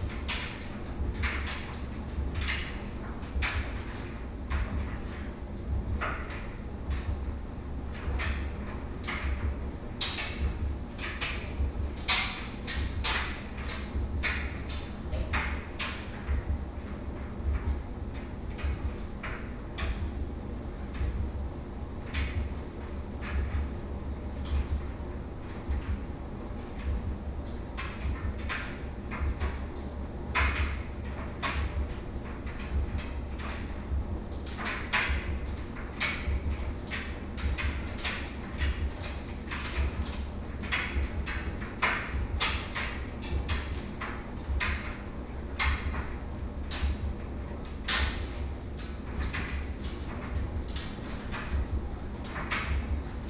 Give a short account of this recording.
Dryer sound, Binaural recordings, Sony PCM D100+ Soundman OKM II